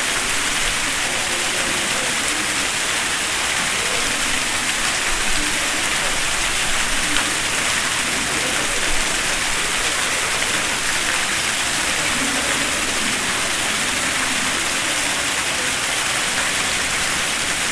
Calgary International Airport, Calgary, AB, Canada - Fountain in Arrivals Hall
Fountain in Arrivals Hall. Recorded with Zoom H4N.